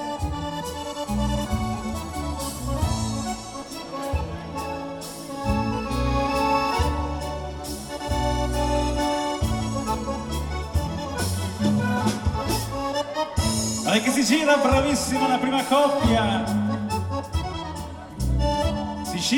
fiesta sagra patata - third recording - here live music and announcements
soundmap international: social ambiences/ listen to the people in & outdoor topographic field recordings